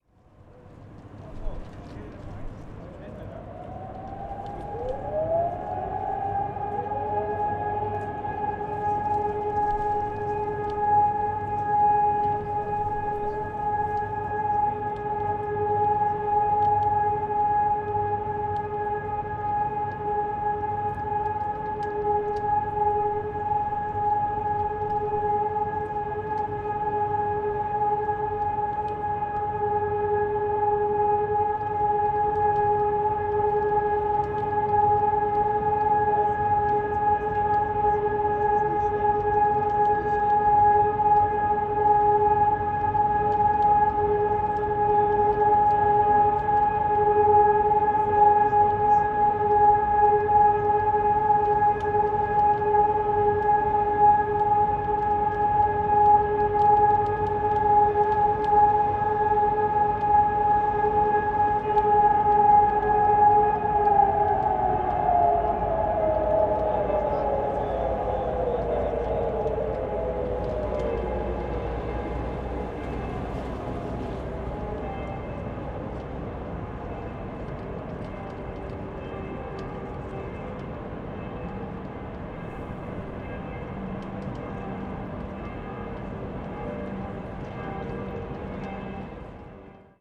köln, hohenzollernbrücke - sirens testing, all clear signal
city wide sirens test, churchbells, wind, sounds of nearby train bridge